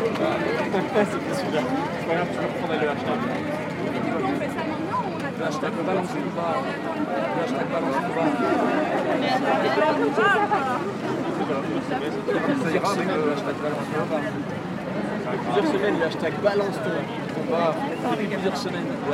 Place de l'Albertine, Bruxelles, Belgium - Balance ton bar demonstration
Demontstration to boycott bars and discos and denounce drug-related sexual abuse in them.
There have been a lot of testimonies along the evening, in this recording you can hear some journalists making interviews of participants.
Tech Note : Sony PCM-D100 internal microphones.